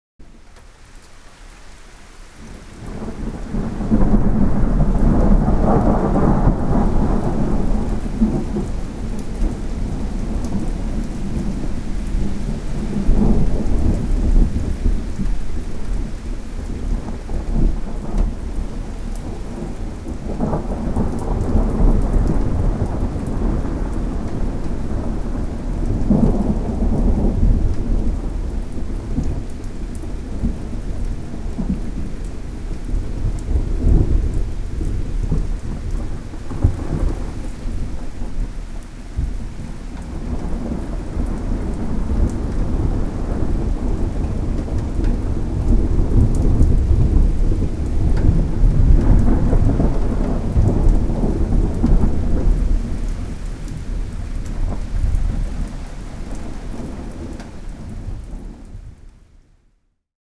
Childhood Home at, Rifle Range Rd, Kidderminster, Worcestershire, UK - A Place From My Life
I am dedicating this sound to this place. I lived here on this grass covered corner plot from my birth in 1946 to 1964. My late brother John was born on this spot in 1950. This was a happy place and full of memories for my family. The title on the map is our original address. The house has gone and whole area completely changed since then.
England, United Kingdom, 17 April 2021